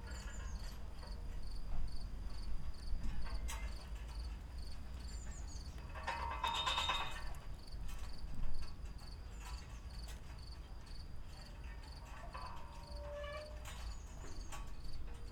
Maribor, Melje - flagpoles
flagpoles singing and elderflowers raining on me. near river Drava, halb abandoned industrial area.
(tech: SD702, AT BP4025)
Maribor, Slovenia, 27 May 2012, 09:30